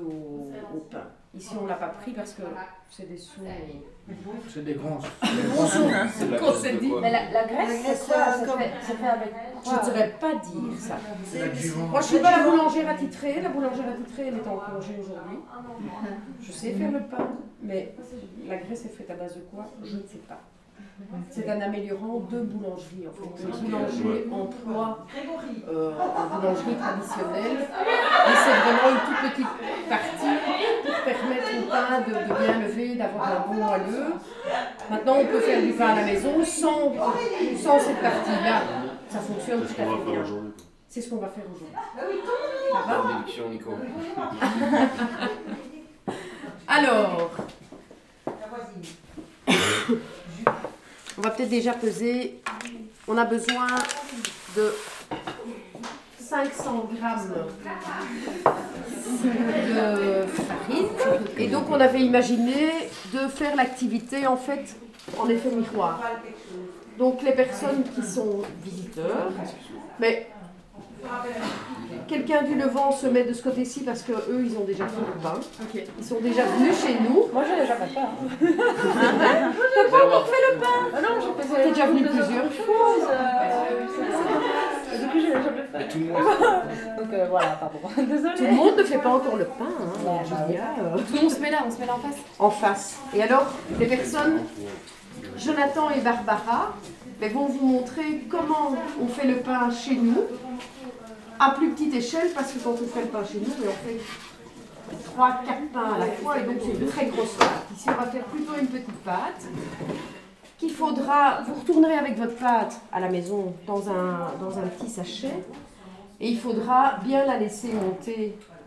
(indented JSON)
{
  "title": "L'Hocaille, Ottignies-Louvain-la-Neuve, Belgique - KAP Le Levant",
  "date": "2016-03-24 15:20:00",
  "description": "This is the continuation of the first recording, the workshop is beginning. As there's a lot of people, a few place (kots are small) and very friendly ambience, it's very noisy ! People begin to learn how to make bread.",
  "latitude": "50.67",
  "longitude": "4.61",
  "altitude": "125",
  "timezone": "Europe/Brussels"
}